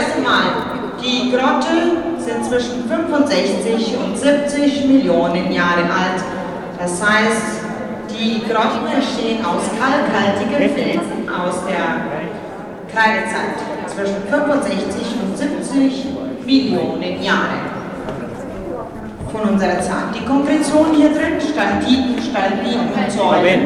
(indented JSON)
{
  "title": "Parco Regionale di Porto Conte, Alghero Sassari, Italy - Neptune's Grotto",
  "date": "2005-07-07 22:05:00",
  "description": "One afternoon we took the 600 and something steps down the side of a cliff to visit Grotta di Nettuno, a beautiful but crowded cave. I hoped to get some recordings of natural reverb but instead recorded The Cave MC who walked around with a wireless mic and detailed the history.",
  "latitude": "40.56",
  "longitude": "8.16",
  "altitude": "2",
  "timezone": "Europe/Rome"
}